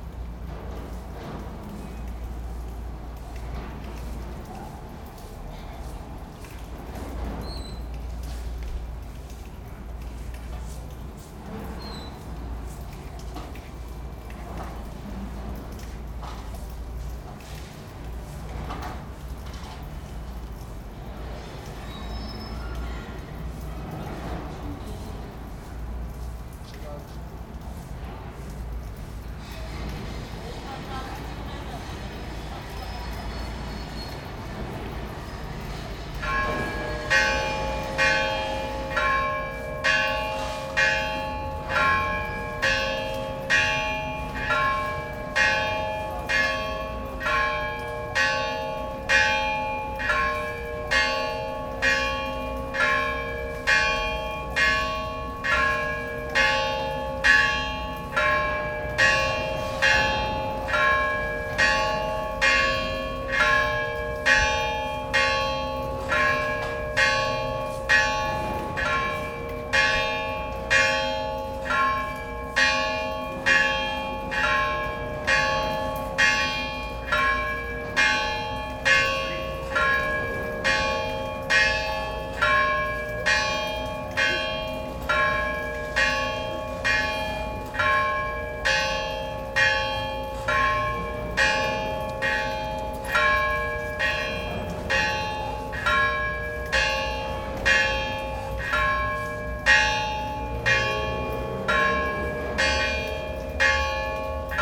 Gyumri, Arménie - Gyumri bells
During the beginning of the Liturgy of Preparation, bells are ringing. The Deacon rings it by chiming, using ropes. Here in Gyumri, it's an extremely bad chiming. We can understand it by the fact the beautiful old bells were destroyed during the 1988 earthquake.